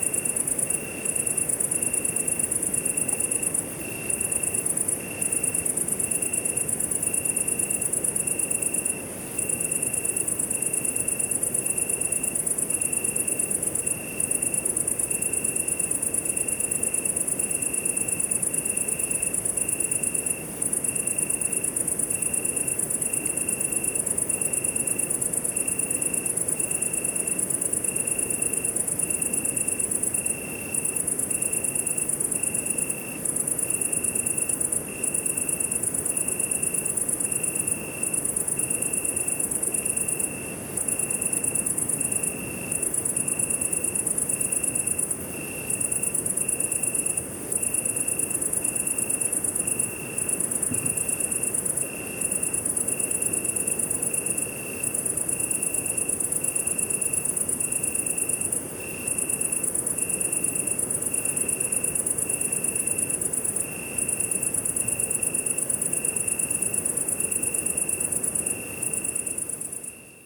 Prés de la Molière, Saint-Jean-du-Gard, France - Quiet Night in the Cevennes National Park - part 1
Quiet night and crickets at Saint Jean du Gard in the Cevennes National Park.
Set Up: Tascam DR100MK3/ Lom Usi Pro mics in ORTF.
France métropolitaine, France, 15 July 2020